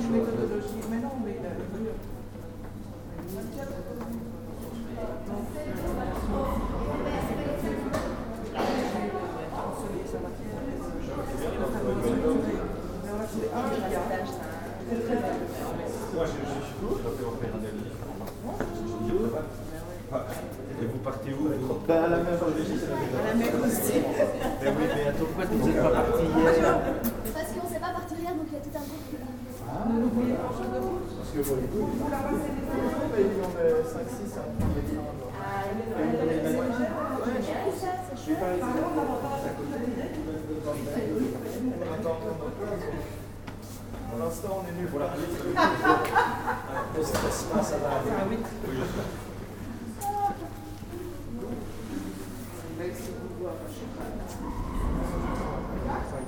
Ottignies-Louvain-la-Neuve, Belgique - Ottignies station
The Ottignies station on a saturday morning. Scouts are going on hike to the sea. Bags are heavy and parents are saying good-bye.
Belgium, 5 March, ~08:00